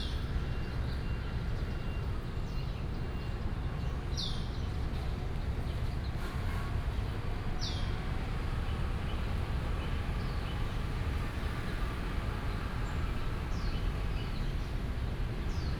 永康公園, Da’an Dist., Taipei City - Bird calls
Bird calls, traffic sound, in the Park
21 July 2015, 09:42